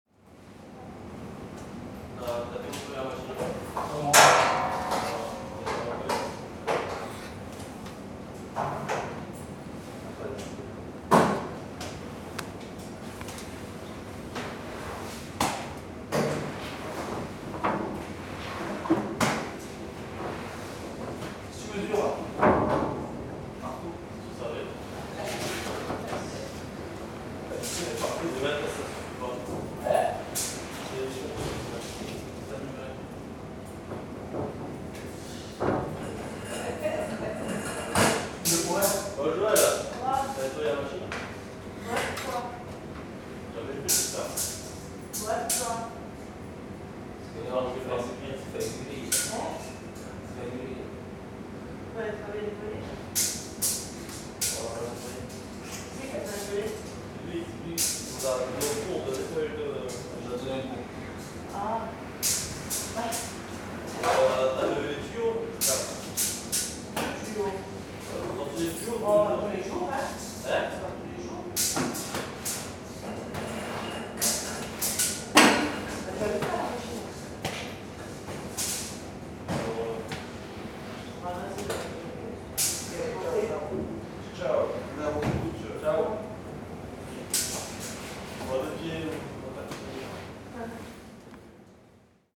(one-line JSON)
{"title": "Restaurant scolaire, collège de Saint-Estève, Pyrénées-Orientales, France - Restaurant scolaire, nettoyage", "date": "2011-02-17 15:00:00", "description": "Dans la cantine.\nÀ l'heure où finit le nettoyage.\nPreneur de son : Nabil.", "latitude": "42.71", "longitude": "2.84", "altitude": "51", "timezone": "Europe/Paris"}